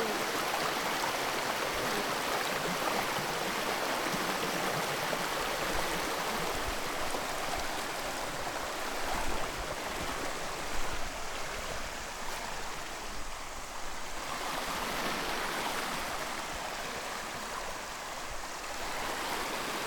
Mountain river, Piatra Craiului Park, Romania
Just walking past the mountain river.
June 2011